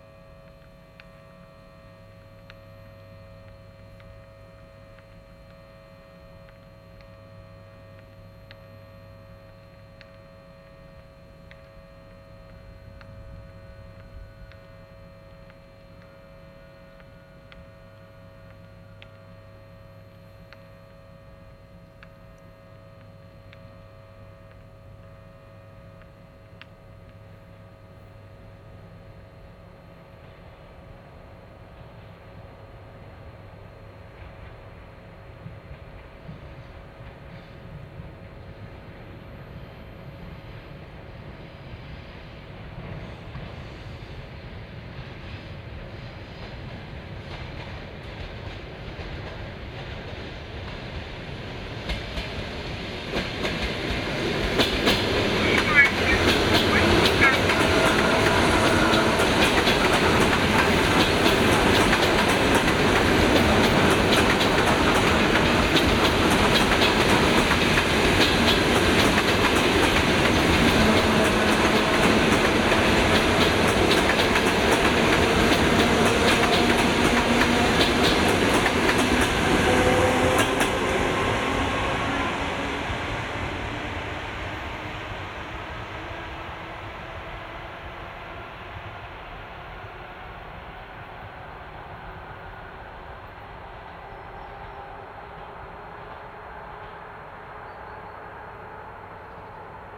Комитетская ул., Королёв, Московская обл., Россия - Train warning
This fragment conteins female voice warning about trains coming by the way number one and the way number two and the sound of passing trains.
Used Zoom H2n and Roland CS-10EM stereo microphone